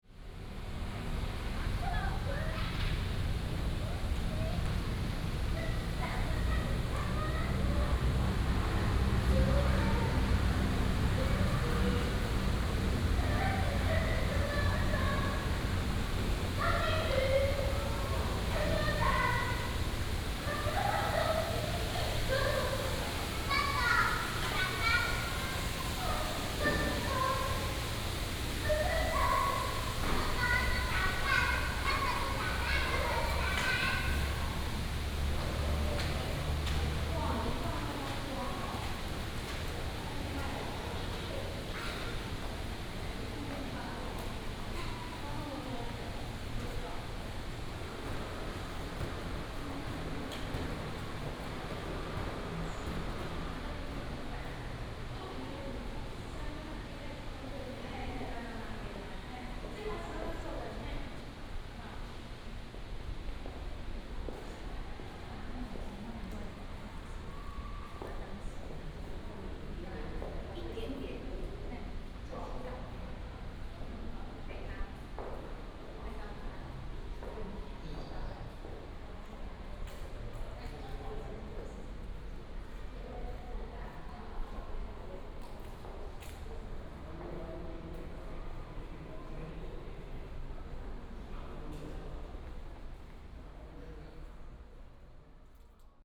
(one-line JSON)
{"title": "新竹縣游泳館, Zhubei City - Walk through the passage between buildings", "date": "2017-05-07 14:21:00", "description": "Walk through the passage between buildings, Traffic sound", "latitude": "24.82", "longitude": "121.02", "altitude": "33", "timezone": "Asia/Taipei"}